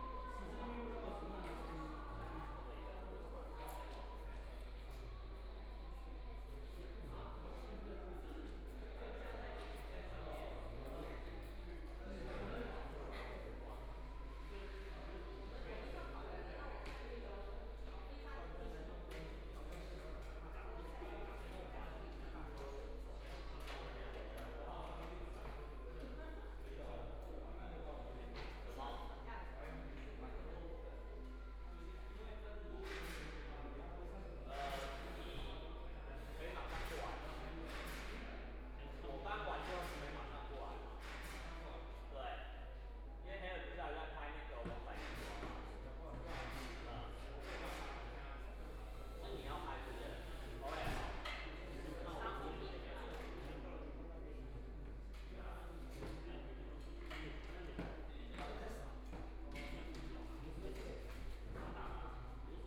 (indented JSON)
{
  "title": "Power Station of Art, Shanghai - Works erection test",
  "date": "2013-12-02 16:27:00",
  "description": "Voice conversations between staff, Construction workers are arranged exhibition, the third floor, Binaural recording, Zoom H6+ Soundman OKM II (Power Station of Art 20131202-3)",
  "latitude": "31.20",
  "longitude": "121.49",
  "altitude": "16",
  "timezone": "Asia/Shanghai"
}